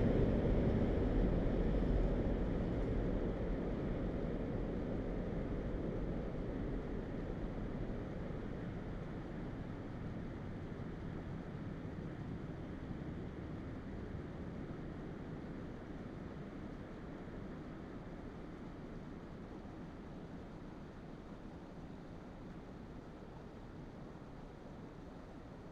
Recording of trains on "Red Bridge" in Bratislava, at this location railway line leads through city forest. Passenger train, freight trains.
Bratislavský kraj, Slovensko